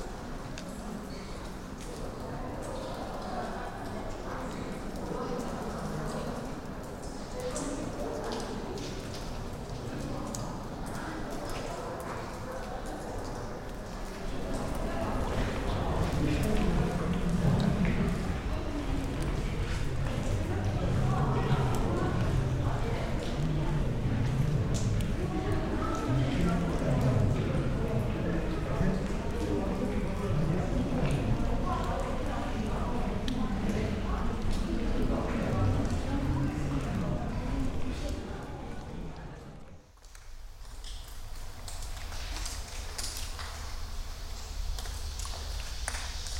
Kimyoung Lava Cave - Kimyoung Lava Cave and Manjanggul Lava Cave
Jeju Island has a series of remarkable lava tube caves. Manjanggul Cave is open to the public and people enjoy the fascinating resonance of the space by vocalising while exploring the tunnels extent. Nearby Kimyoung Cave is not open to the public...and proved a great place to enjoy the rich sonic textures of the underworld.